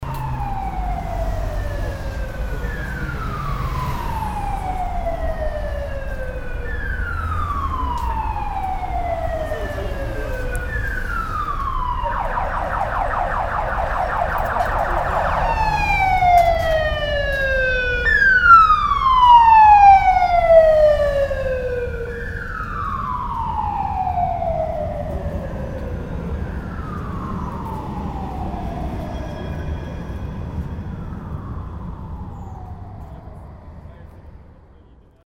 budapest, ferenc körut, police siren
noon at the main ring street of pecs, a police car with siren passing by
international cityscapes and social ambiences